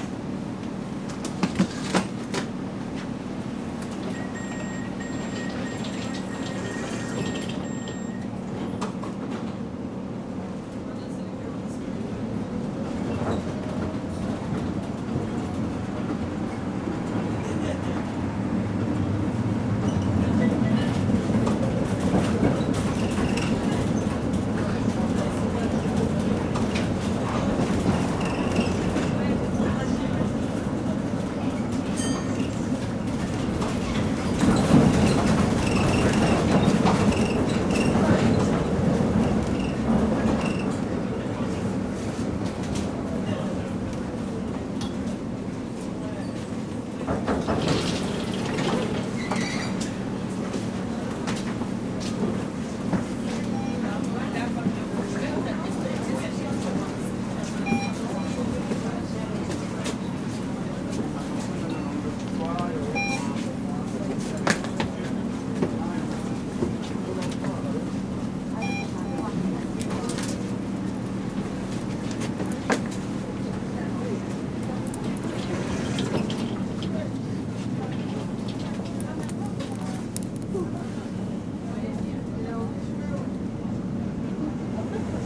Old model tram.
Tech Note : Olympus LS5 Internal microphones.
19 May 2022, 20:40